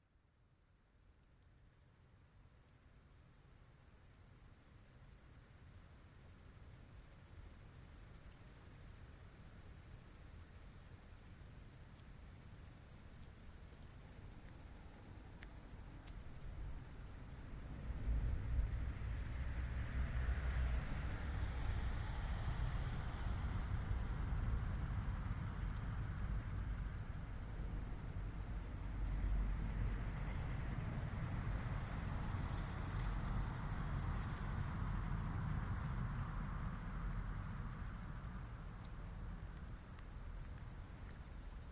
While sleeping in the yard of an abandoned farm, this is the sound of the most shrill insect I have ever heard. I tested people, it's so shrill that some persons didn't hear the constant sound of this inferno insect.
Tested : 16 k Hz !
Dr. Lutz Nevermann said me : " The insect sounds like Tettigonia viridissima ". The sound is the same (see wikipedia in english) and time of the day was good.
Clérey, France, 2 August